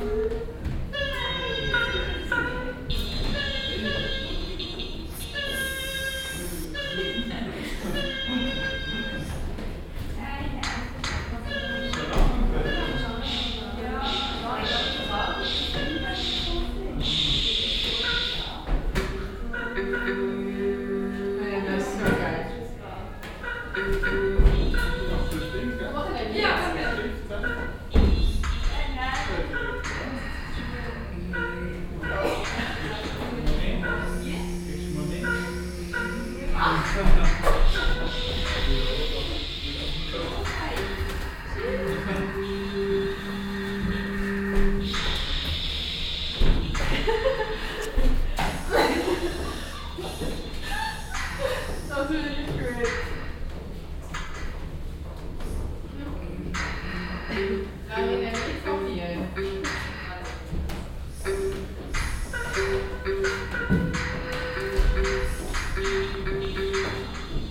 basel, dreispitz, shift festival, zelt, installation im zugwagon - basel, dreispitz, shift festival, installation im zugwagon 02
von denise kratzer&jan jänni in einem zugwagon + publikum
soundmap international
social ambiences/ listen to the people - in & outdoor nearfield recordings